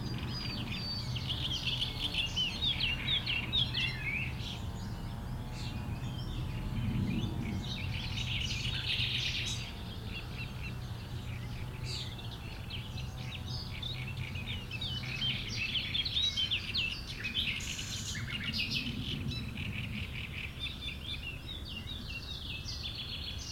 plage de Chatillon, Chindrieux, France - Locustelle tachetée .
Dans la roselière de la plage de Châtillon au Nord du lac du Bourget, une rare locustelle tachetée, rossignol, rousserole turdoïde, fauvette...